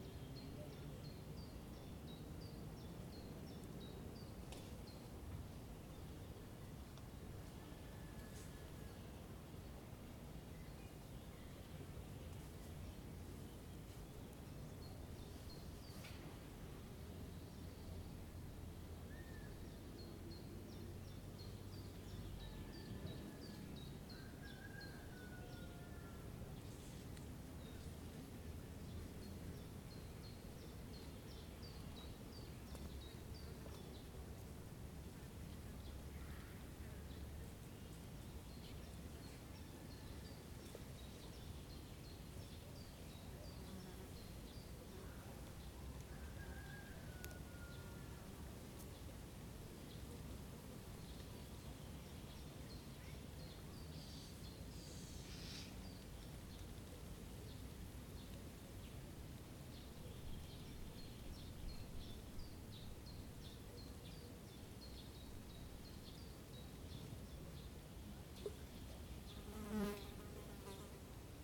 вулиця Зарічна, Вінниця, Вінницька область, Україна - Alley12,7sound2fishermen
Ukraine / Vinnytsia / project Alley 12,7 / sound #2 / fishermen
27 June 2020, ~13:00